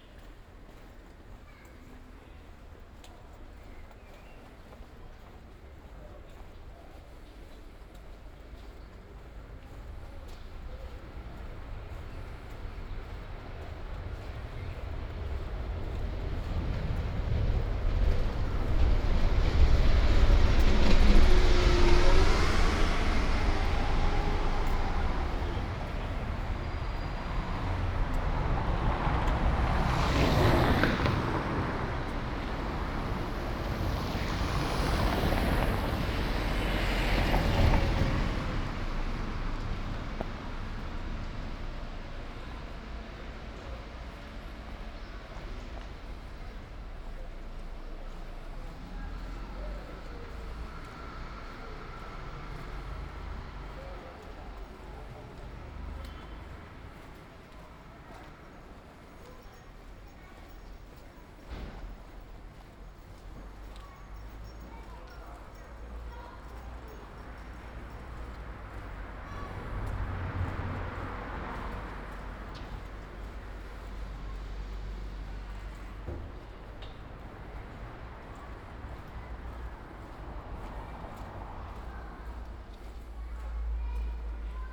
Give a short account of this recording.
"It’s five o’clock with bells on Tuesday in the time of COVID19" Soundwalk, Chapter XLV of Ascolto il tuo cuore, città. I listen to your heart, city, Tuesday April 14th 2020. San Salvario district Turin, walking to Corso Vittorio Emanuele II and back, thirty five days after emergency disposition due to the epidemic of COVID19. Start at 4:51 p.m. end at 5:18 p.m. duration of recording 27’02”, The entire path is associated with a synchronized GPS track recorded in the (kmz, kml, gpx) files downloadable here: